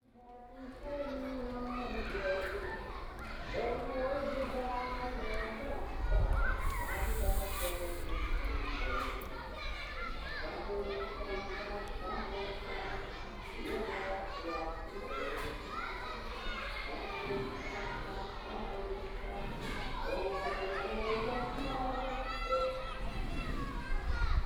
Fangyuan Township, Changhua County - Primary school
In the Primary school, Binaural recordings, Zoom H6+ Soundman OKM II
Changhua County, Taiwan, 23 December, ~15:00